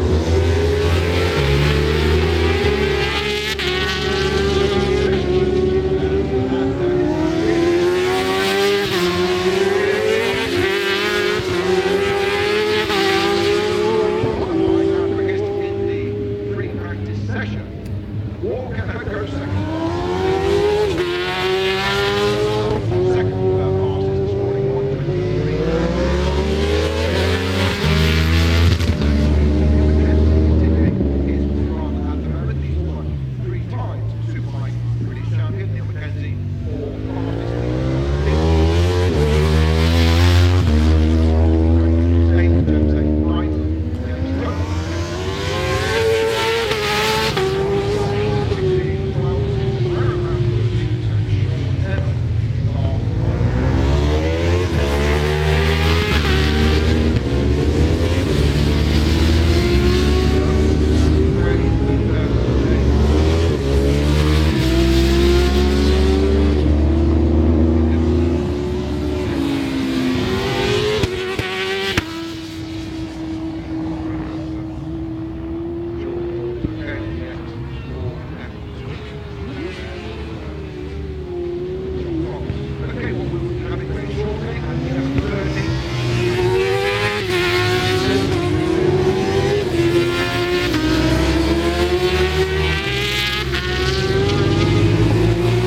{
  "title": "Silverstone Circuit, Towcester, United Kingdom - British Superbikes 2000 ... practice",
  "date": "2000-07-02 10:10:00",
  "description": "British Superbikes 2000 ... pratice ... one point stereo mic to minidisk ...",
  "latitude": "52.07",
  "longitude": "-1.02",
  "altitude": "152",
  "timezone": "Europe/London"
}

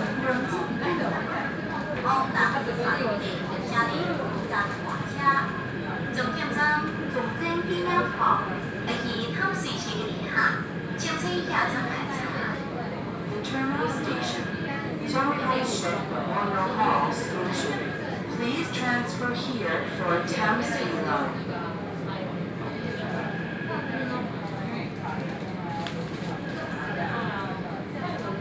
{
  "title": "Taipei, Taiwan - Inside the MRT train",
  "date": "2012-10-31 20:58:00",
  "latitude": "25.03",
  "longitude": "121.51",
  "altitude": "11",
  "timezone": "Asia/Taipei"
}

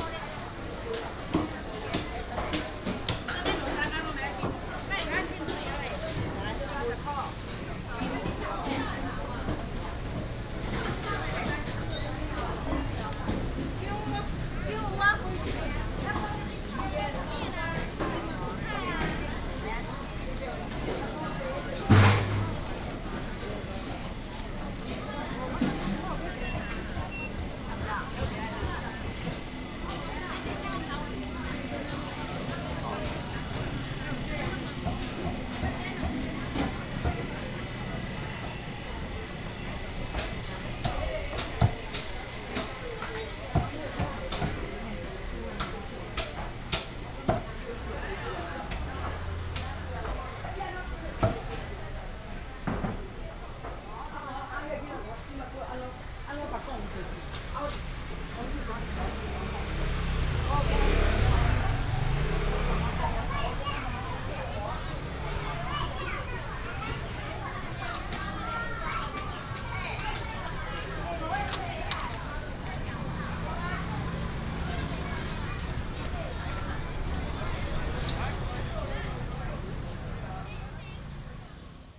{
  "title": "民雄市場, Minxiong Township - Walking in the market",
  "date": "2018-02-15 11:19:00",
  "description": "Walking in the traditional market, lunar New Year\nBinaural recordings, Sony PCM D100+ Soundman OKM II",
  "latitude": "23.56",
  "longitude": "120.43",
  "altitude": "31",
  "timezone": "Asia/Taipei"
}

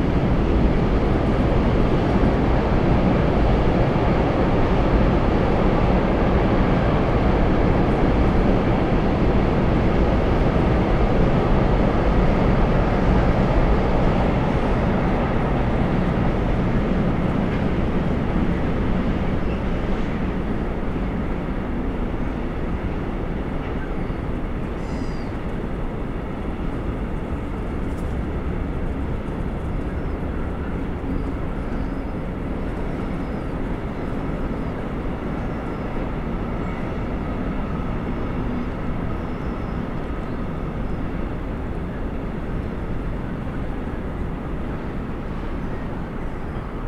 {
  "title": "Deutz harbour, Köln, Germany - closing time harbour ambience, gulls and train",
  "date": "2013-08-13 19:55:00",
  "description": "Köln, Deutz harbour, closing time harbour ambience between scrapyard and flour mill, excited gulls and a passing-by train.\n(Sony PCM D50, DPA4060)",
  "latitude": "50.92",
  "longitude": "6.98",
  "timezone": "Europe/Berlin"
}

